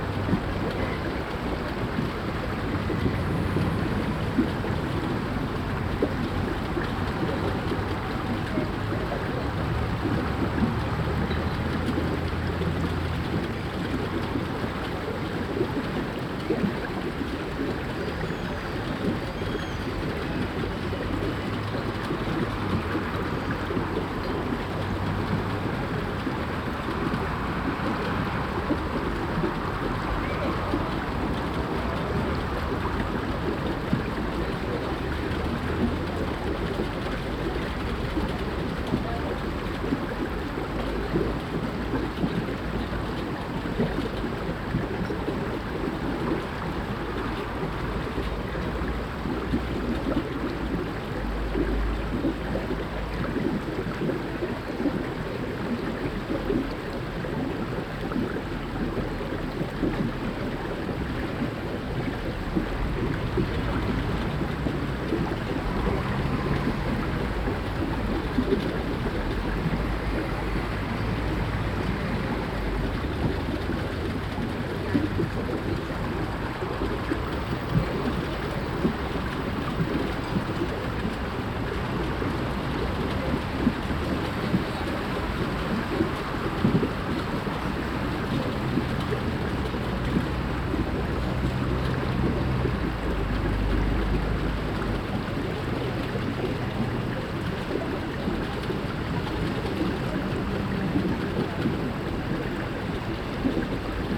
A waterspout fountain (near the bubbly drainage), a cafe with clattering tableware and chatting people at a busy crossroads, traffic, wind shaking ropes on flagpoles, in a distance workers building a stage for a campus festival, some gulls crying.
Binaural recording, Zoom F4 recorder, Soundman OKM II Klassik microphones with wind protection